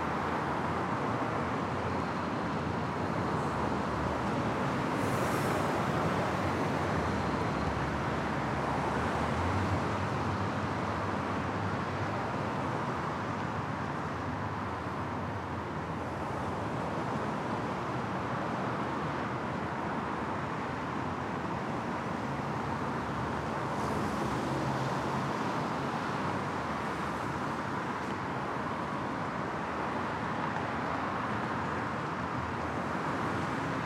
Cammeray NSW, Australia - Falcon Street Pedestrian and Cylcist Bridge
Recorded on this bridge at peak hour on a weekday morning - cars coming to and from the harbour bridge and the city - DPA 4060s, H4n
19 May, 09:00